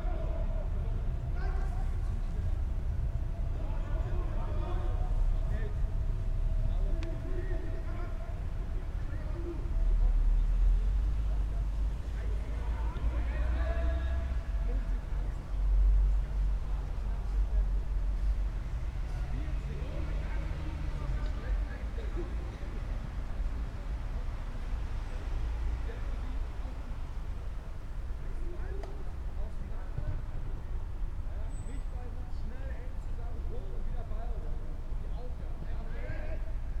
Mitte, Berlin, Germany - Berlin Mitte Fussballtraining
Fußball-training in Berlin Mitte.